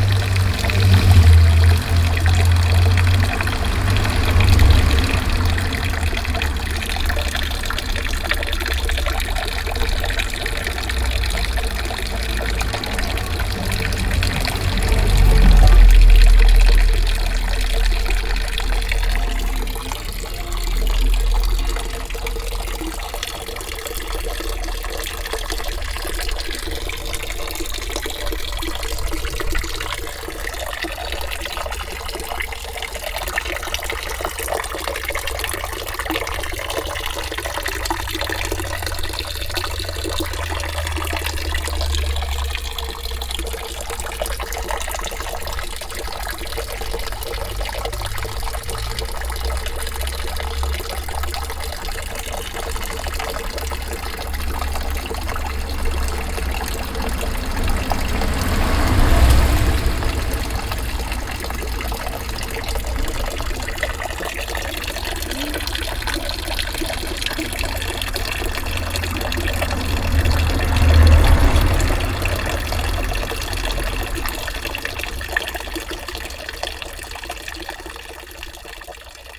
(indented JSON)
{
  "date": "2011-07-11 16:13:00",
  "description": "Florac, Rue du Thérond, the fountain",
  "latitude": "44.33",
  "longitude": "3.59",
  "altitude": "550",
  "timezone": "Europe/Paris"
}